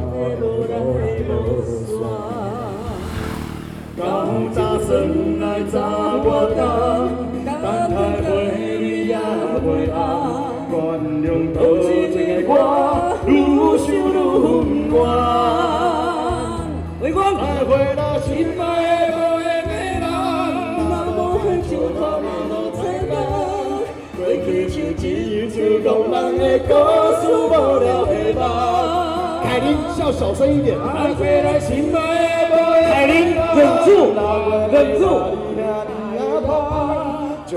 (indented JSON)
{
  "title": "東海里, Taitung City - barbecue event",
  "date": "2014-09-05 20:11:00",
  "description": "Traffic Sound, Mid-Autumn Festival barbecue event\nZoom H2n MS +XY",
  "latitude": "22.75",
  "longitude": "121.14",
  "altitude": "11",
  "timezone": "Asia/Taipei"
}